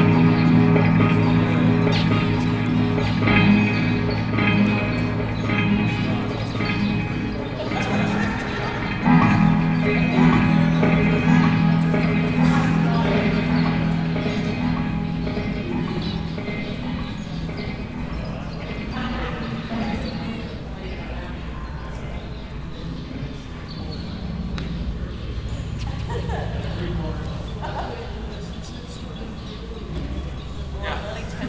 København K, København, Danemark - From here to ear
From Here to Ear, an installation by Celeste Boursier-Mougenot in Copenhagen Contemporary, recorded with Zoom H6
København K, Denmark, 15 December 2016, 11:46